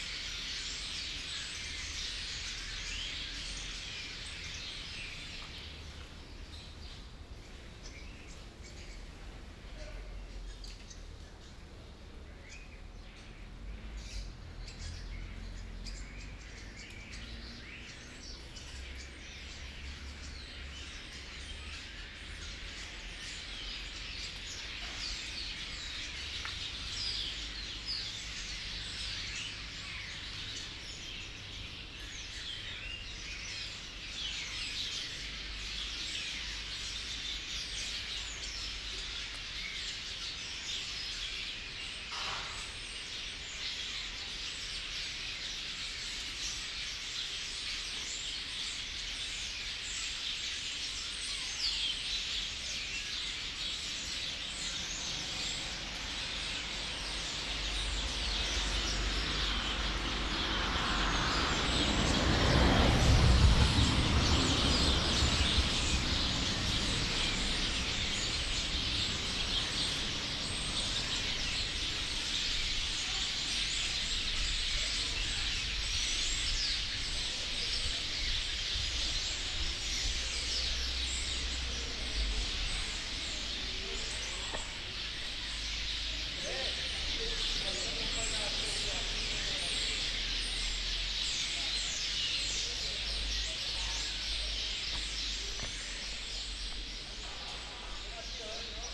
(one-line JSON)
{"title": "Passeig de la Sardana, Portbou, Girona, Spagna - PortBou walk day3", "date": "2017-09-28 19:20:00", "description": "Walk day on the trace of Walter Benjamin: same path as previous nigh walking (ee here) of previous night. start at Port Bou City Library at 19:20 p.m. of Thursday September 28 2017; up to Memorial Walter Benjamin of Dani Karavan, enter the staircases of the Memorial, crossing friends visiting the memorial, slow walk into the cemetery, sited on external iron cube of Memorial, in front of sea and cemetery, back to village.", "latitude": "42.43", "longitude": "3.16", "altitude": "13", "timezone": "Europe/Madrid"}